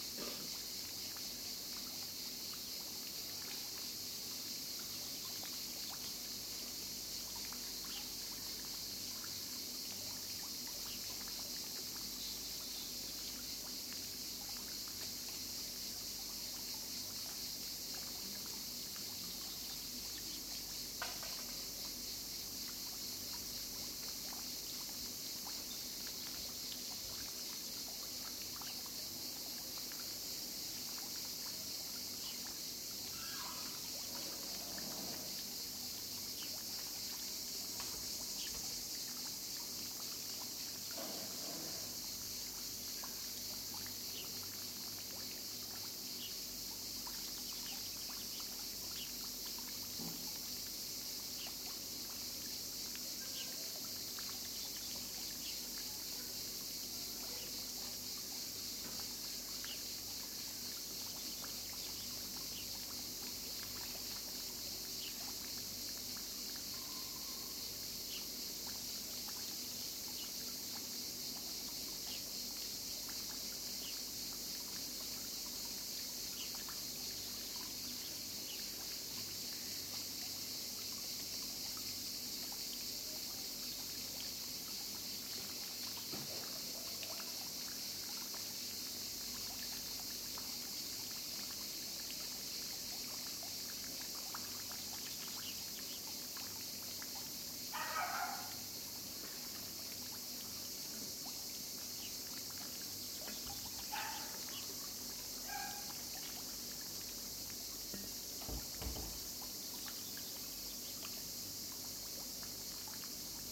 Troulos, Greece - poolside ambience
A quiet morning by the pool before too many people are awake. The cicadas are chirping and the hotel puppy has a go at one of the cats. Bliss in the early warm sunshine.